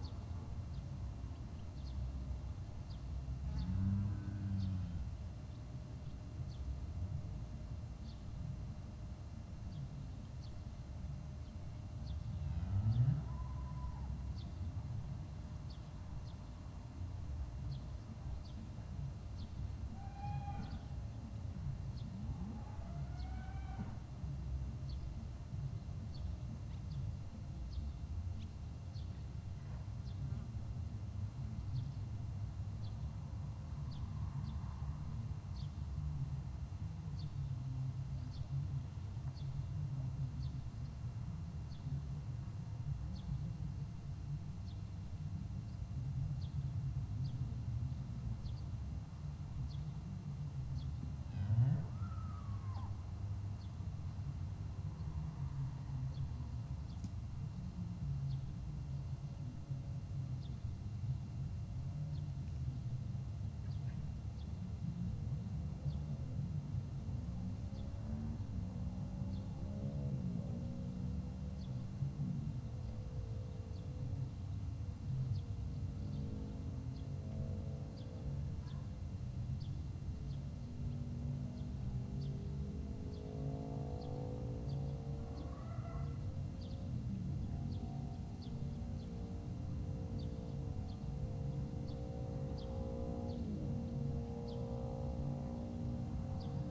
Wlodzimierz Puchalski was a polish pioneer of nature photography and popular science and nature films. Recording was made in front of his summer house in the village Morusy (Northeastern Poland) where he made several films about the animals of Narew and Biebrza rivers.
15 July 2017, 13:05